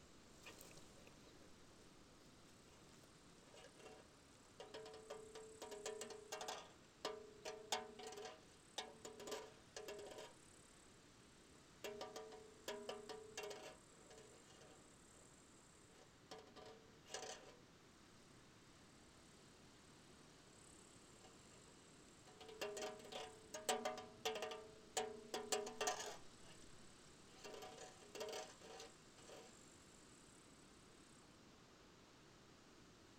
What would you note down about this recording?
empty beer can svaying in a strong wind - probably as "device" to scare out wild animals from the garden